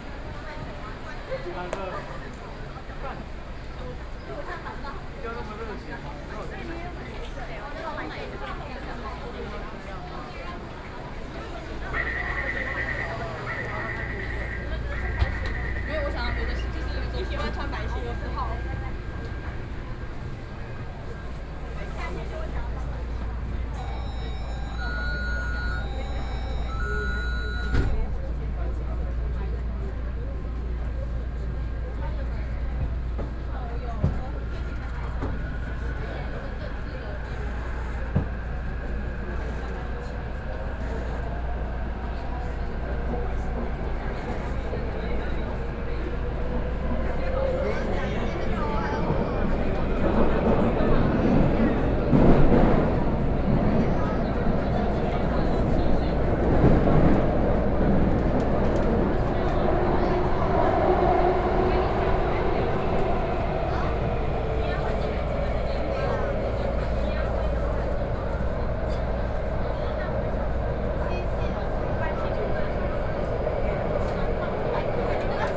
MRT stations, from Zhongxiao XinshengSony to Guting, PCM D50 + Soundman OKM II
台北市 (Taipei City), 中華民國